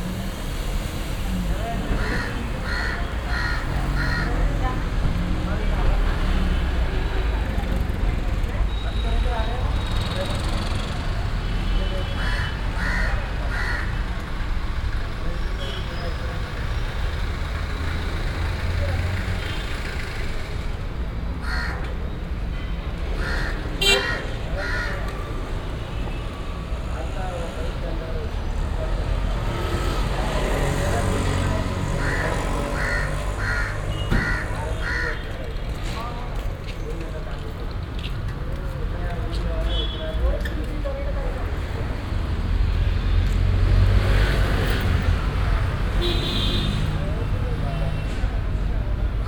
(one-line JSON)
{"title": "bangalor, karnataka, small street crossing", "date": "2011-02-15 15:32:00", "description": "traffic at a small street crossing in the early afternoon\ninternational city scapes - social ambiences, art spaces and topographic field recordings", "latitude": "12.91", "longitude": "77.59", "altitude": "920", "timezone": "Europe/Berlin"}